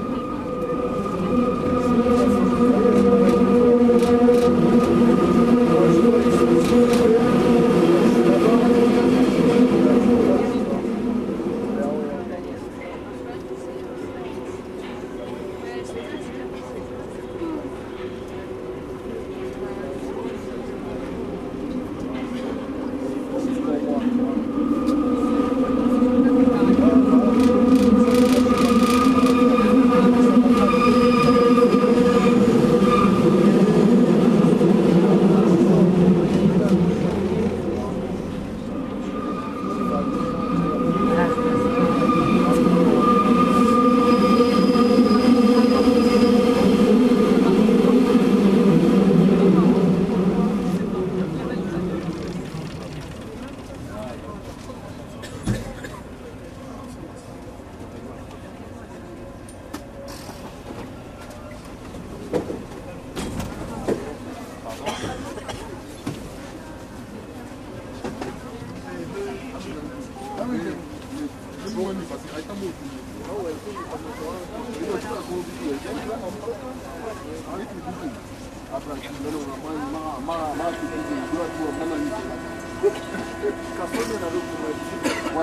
12 million people means of transport. Metro of Paris is where society is classless.
Paris, France, December 2010